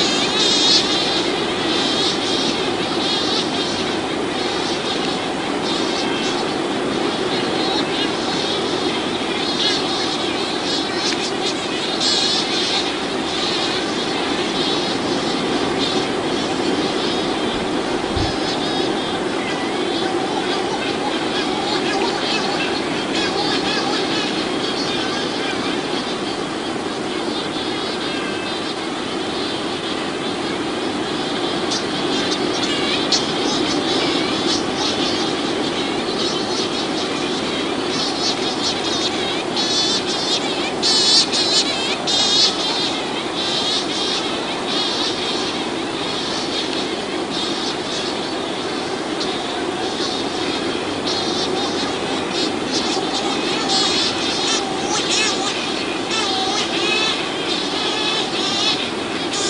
VGR; Sturmtaucher in der Nacht - Gmora; VGR; Oasis
Sturmtaucher im Liebesrausch